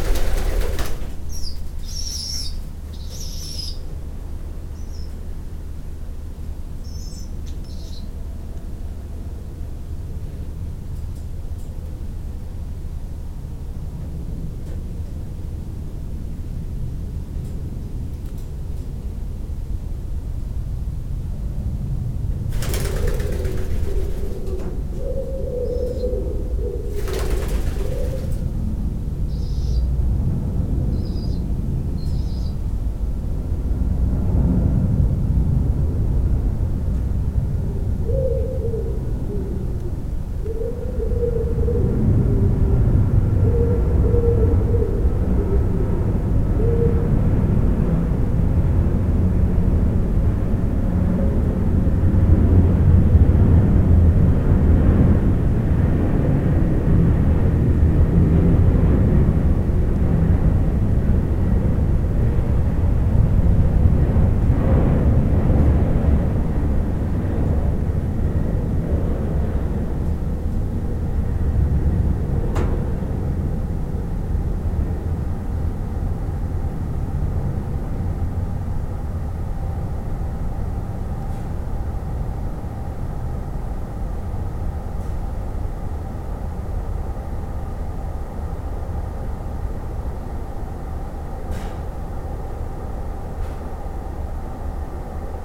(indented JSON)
{
  "title": "Courcelles, Belgique - Abandoned factory",
  "date": "2018-08-05 07:20:00",
  "description": "Into a very huge abandoned factory, some doves shouting because I'm quite near the nest and the juvenile birds.",
  "latitude": "50.45",
  "longitude": "4.40",
  "altitude": "116",
  "timezone": "GMT+1"
}